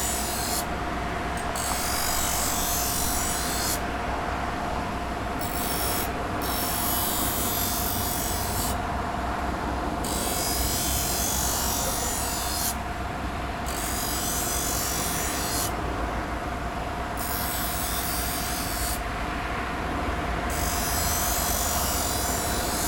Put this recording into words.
a man sharpening restaurant knives on an electric grinder (sony d50 internal mics)